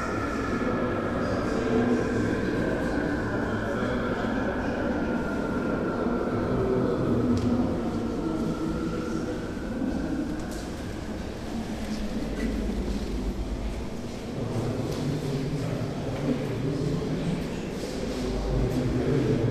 Freiberg, terra mineralia, Ausstellungsraum der Asteroiden - Freiberg, tterra mineralia, Ausstellungsraum der Asteroiden